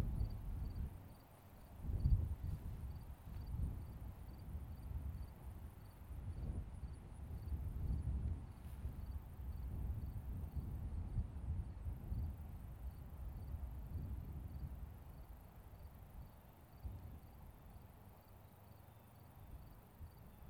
Pleasant Hill, MD, USA - Ququ